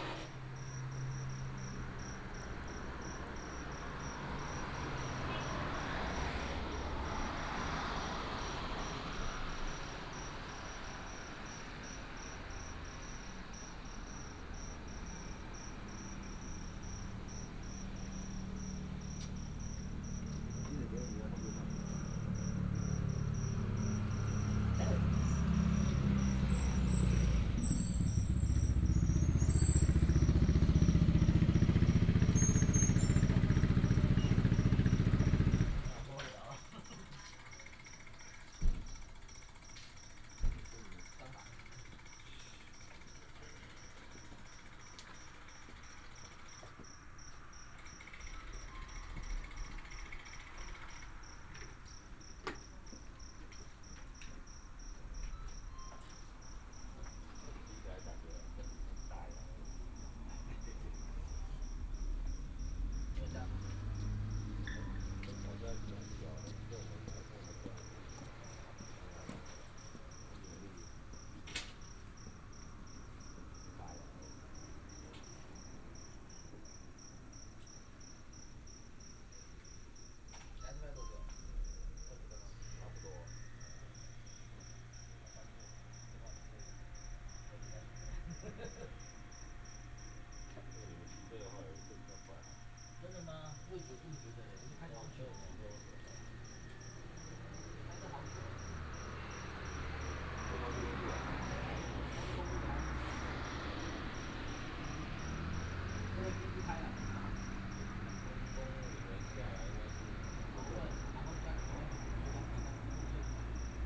in convenience store, traffic sound, heavy motorcycle enthusiasts gather here to chat and take a break, Insect beeps, Binaural recordings, Sony PCM D100+ Soundman OKM II
Nanzhuang Township, Miaoli County, Taiwan, 1 November 2017, 22:00